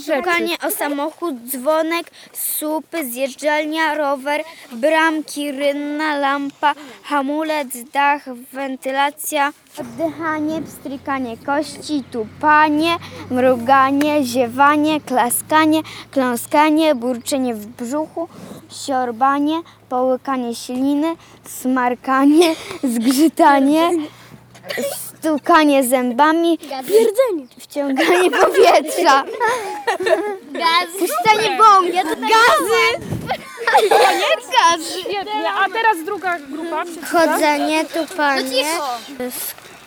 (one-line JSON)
{"title": "Wyspa Sobieszewska, Gdańsk, Poland - Wyspa Gra !", "date": "2015-10-02 10:11:00", "description": "Nagranie zrealizowane przez Kamilę Staśko-Mazur podczas warsztatów w Szkole nr.25. Projekt Ucho w Wodzie", "latitude": "54.34", "longitude": "18.91", "altitude": "2", "timezone": "Europe/Warsaw"}